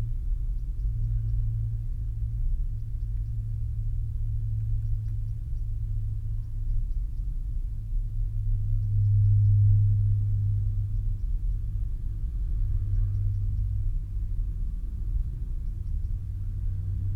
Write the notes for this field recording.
… listening to the hum of the morning rush hour on the “Wilhelmstrasse” from inside the attic… I can hear people pulling up the blinds downstairs… I open the window… …im dreieckigen Holzraum des Trockenbodens höre ich dem Brummen der “morning rush hour” auf der Wilhelmstrasse zu… irgendwo unter mir ziehen die Leute ihre Jalousien hoch… ich öffne eine der Dachluken…